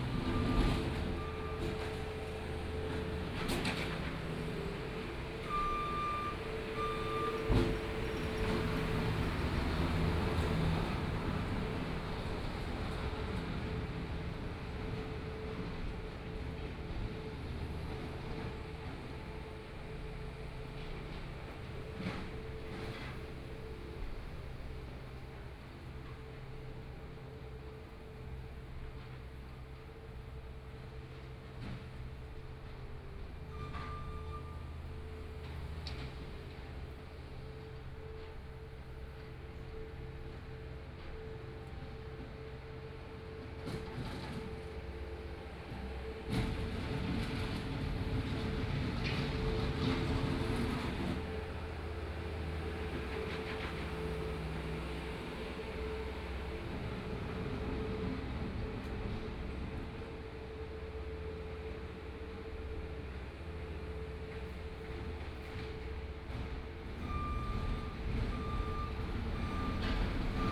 Baosang Rd., Taitung City - Road Construction
Road construction noise, Binaural recordings, Zoom H4n+ Soundman OKM II ( SoundMap2014016 -10)
Taitung County, Taiwan, January 2014